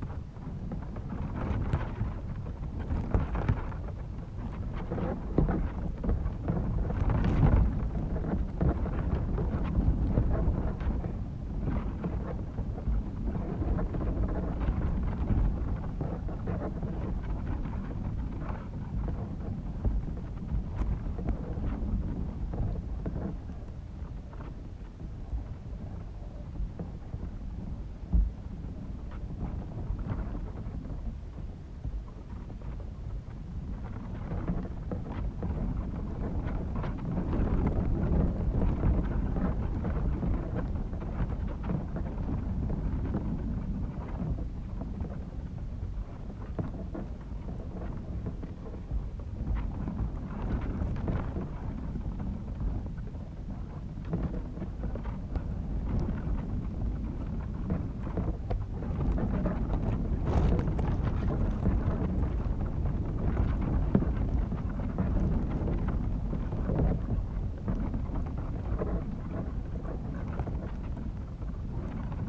Maasvlakte, Maasvlakte Rotterdam, Niederlande - the first grass at the artificial beach
two akg 411p contact microphones in the grass on the dune.
Nederland, European Union, 17 April